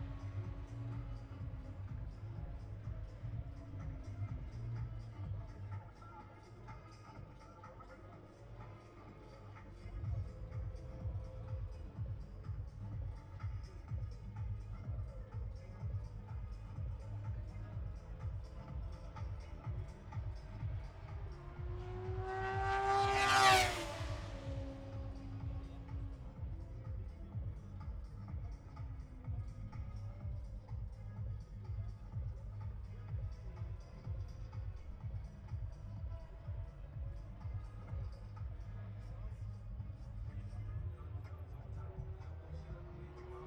british motorcycle grand prix 2022 ... moto two free practice three ... dpa 4060s on t bar on tripod to zoom f6 ...